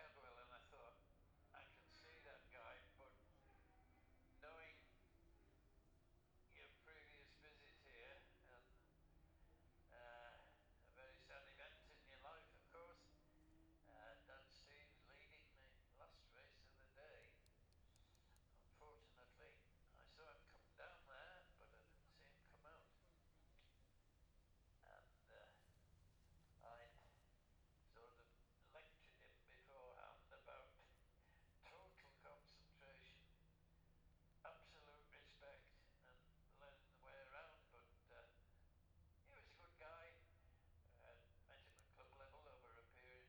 the steve henshaw gold cup 2022 ... 600 group one practice ... dpa 4060s on t-bar on tripod to zoom f6 ... red-flagged then immediate start ...
Scarborough, UK, 16 September 2022